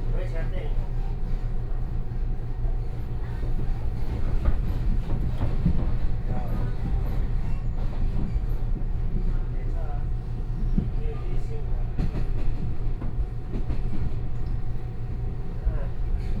From Kaohsiung Station to Zuoying Station, This route will change in the future as the Mass Transit Railway
Gushan District, Kaohsiung City, Taiwan, 15 May